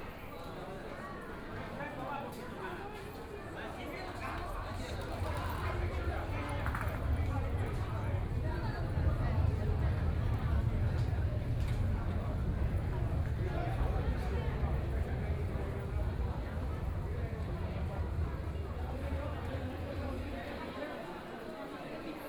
Shanghai Railway Station, China - Walking in the underpass
From the subway station to the train station via the underpass, The sound of the crowd, Store noisy sound, Binaural recording, Zoom H6+ Soundman OKM II
23 November 2013